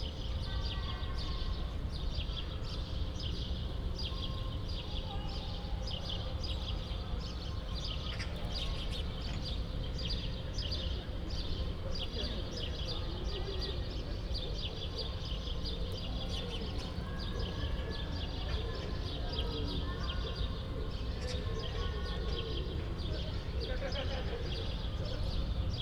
Bruno-Apitz-Straße, Berlin, Deutschland - within residential block
within a residental building block, sounds echoing between the walls, early evening ambience
(Sony PCM D50, DPA4060)